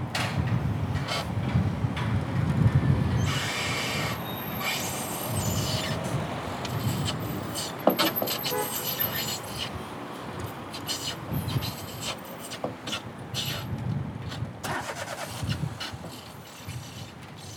Binckhorst, L' Aia, Paesi Bassi - Crackling flag
A flag crackling and traffic. Recorded with Zoom H2n in mid/side mode.
Nederland, European Union, 14 March 2013